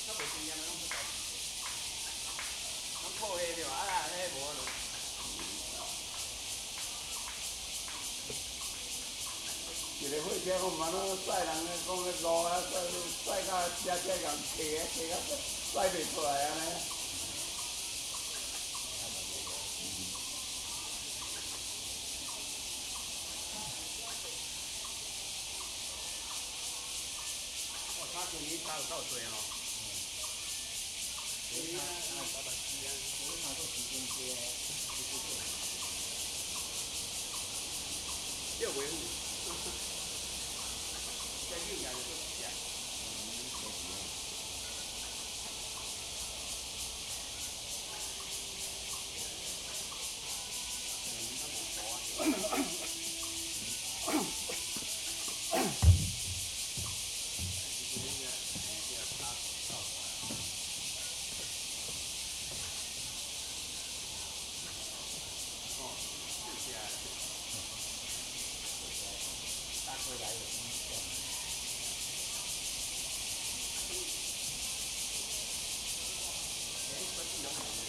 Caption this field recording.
A group of old people talking frog, A lot of people doing aerobics in the mountains, Bird calls, Cicadas cry, Zoom H2n MS+XY